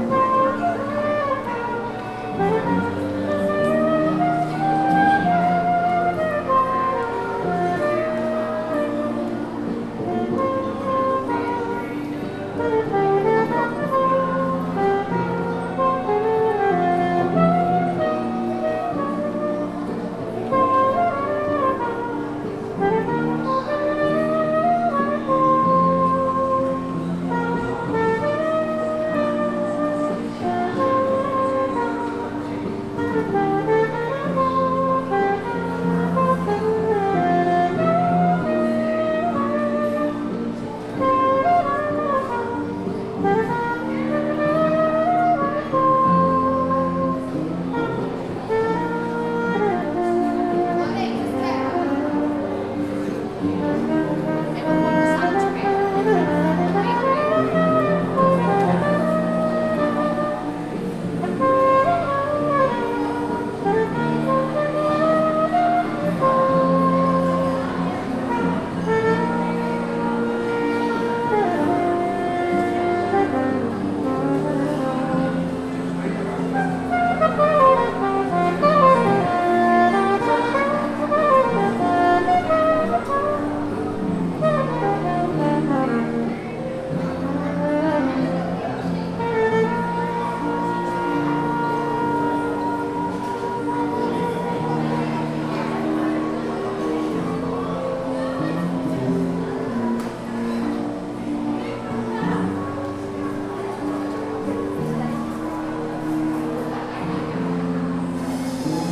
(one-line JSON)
{"title": "Shopping Aricanduva - Avenida Aricanduva - Jardim Marilia, São Paulo - SP, Brasil - Saxofonista e Baixista em um café", "date": "2019-04-06 19:21:00", "description": "Gravação de um saxofonista e um baixista feita de frente a um café no Shopping Leste Aricanduva durante o dia 06/04/2019 das 19:21 às 19:33.\nGravador: Tascam DR-40\nMicrofones: Internos do gravador, abertos em 180º", "latitude": "-23.57", "longitude": "-46.50", "altitude": "757", "timezone": "America/Sao_Paulo"}